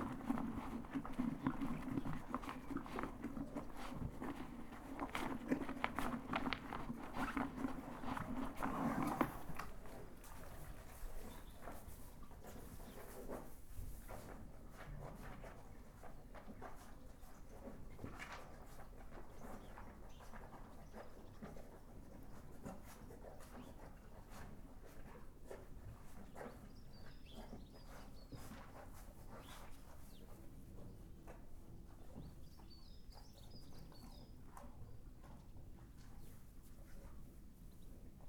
Punnetts Town, UK - Lizzie and Betty (cows) eating from bucket
Early morning feed for two cows (Lizzie and Betty) in farm building bottom yard. Concentrating on the mother Lizzie with Betty eating from another bowl. Good squeaks from tongue trying to get the very last food! Tascam DR-05 internal microphones.